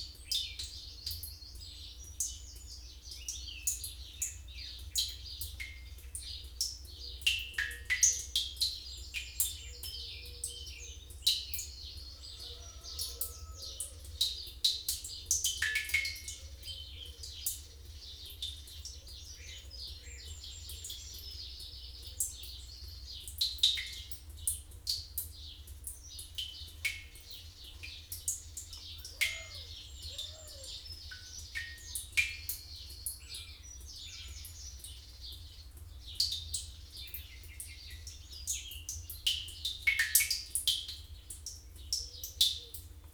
Luttons, UK - water butt suikinkutsu ... sort of ...
Large water butt ... condensation running down a down pipe ... drops into not much water ...had been listening/finding out about suikinkutsu sounds ... lavalier mics used to record ... bird song ... wren ... song thrush ...
Malton, UK, 9 August, 06:30